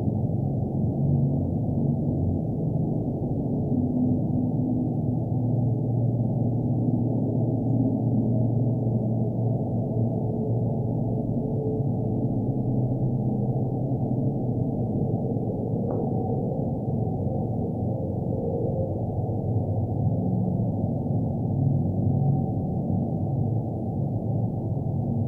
Donut No., St. Louis, Missouri, USA - Donut No. 3
Geophone recording from Donut No. 3 by Fletcher Benton at Laumeier Sculpture Park captures heavy freeway traffic nearby.